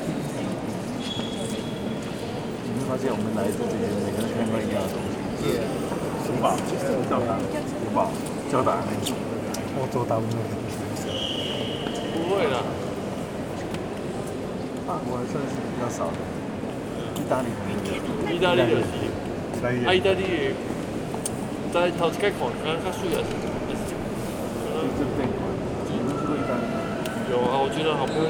Chartres, France - Chartres cathedral
A quiet evening in the Chartres cathedral. Guided tour of Japanese tourists, speaking smoothly, and other tourists looking to the stained glass.
31 December 2018, 17:30